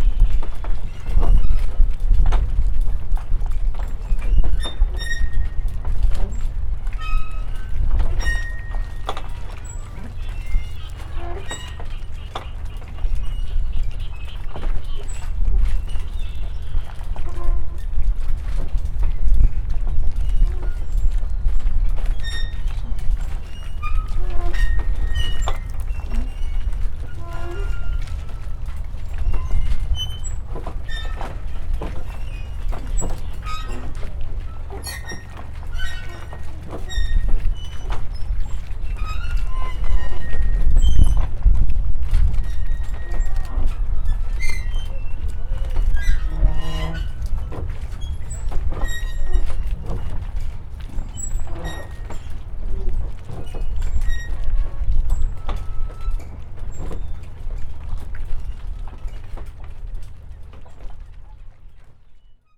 whines of platforms of a floating pier, gulls and lots of wind